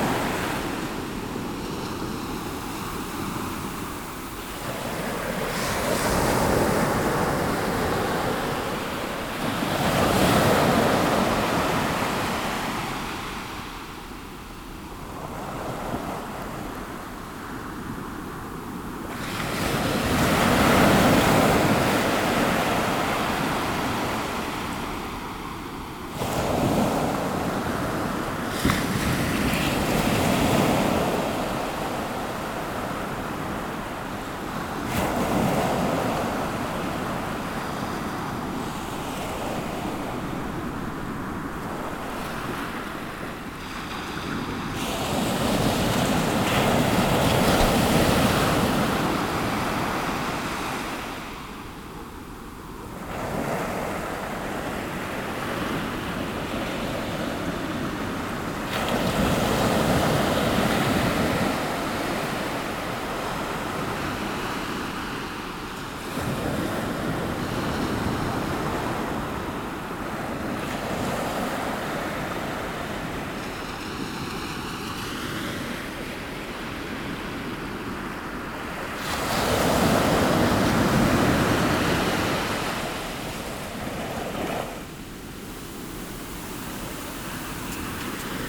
Noordwijk, Nederlands - The sea
Noordwijk-Aan-Zee, the sea at Kachelduin.